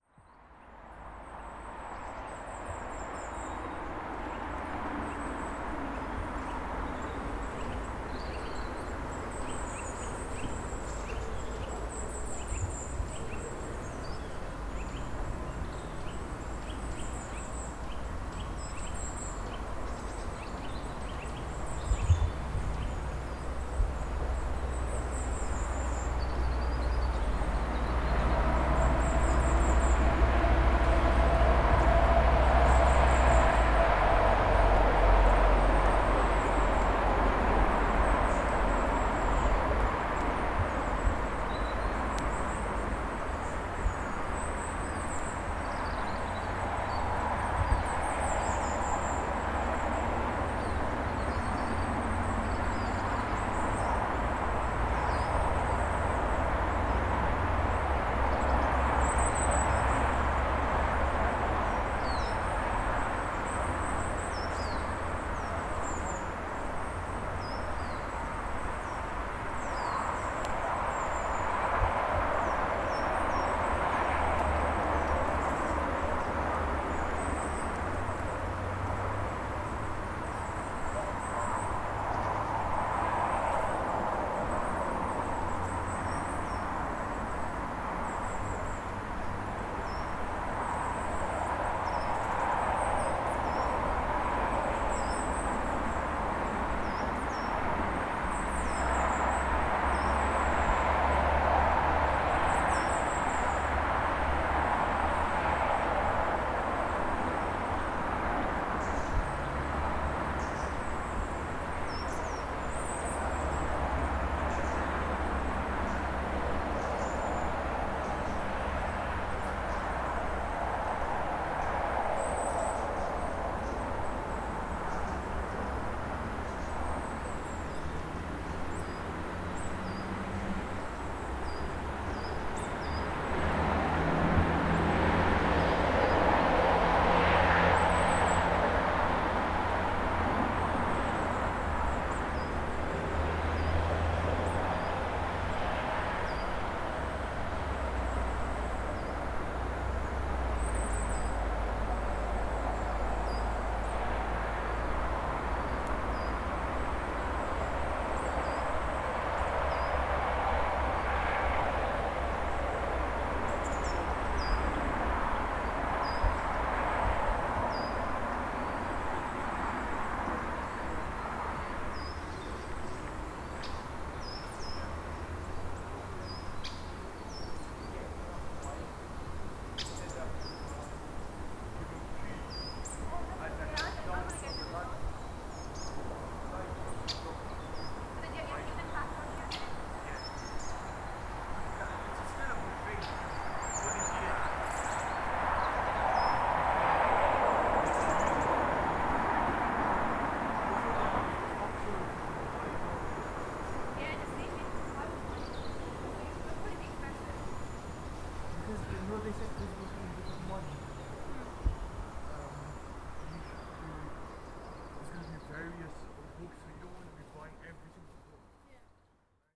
Cofton Hackett, Birmingham, UK - Lickey Hills (boundary)
Recorded on the edge of Lickey Hills Country Park with a Zoom H4N.
21 September 2016